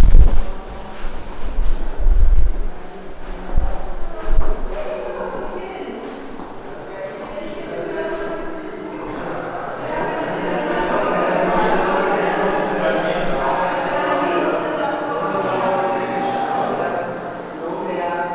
Muzej norosti, Museum des Wahnsinns, Trate, Slovenia - with clogs, walking the yellow colored hallway
Zgornja Velka, Slovenia, 20 June 2015